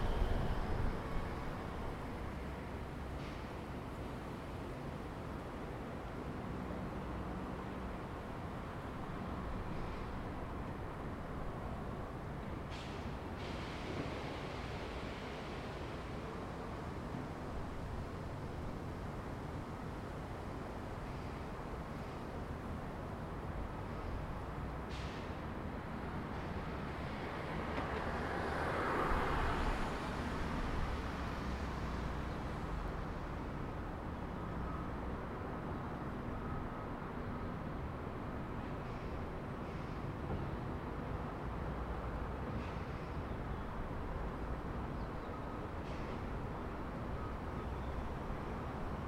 Puckey Ave, North Wollongong NSW, Australia - Monday Mornings at UOW Innovation
Recording at the corner of Building 232 at the UOW innovation campus during a morning class.